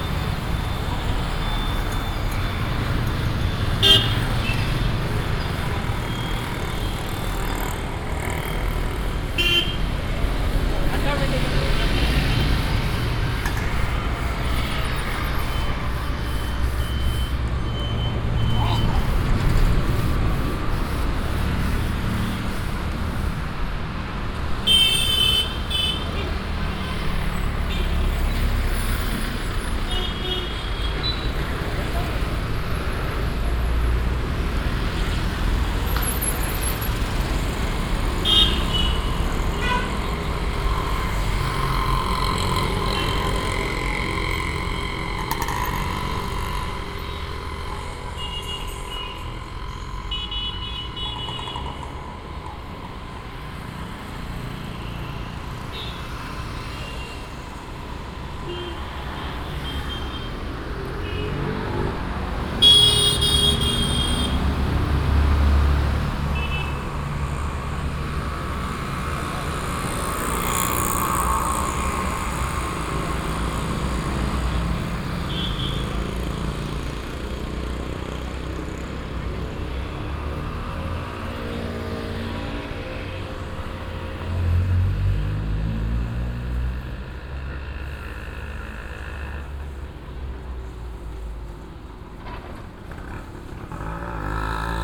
bangalor, karnataka, 24th main street
bangalore traffic noise on a sunday morning - all sorts of cars, busses, lorries, motorbikes and tricycles passing by - hooting every 20 min.
international city scapes - social ambiences and topographic field recordings
Karnataka, India, February 2011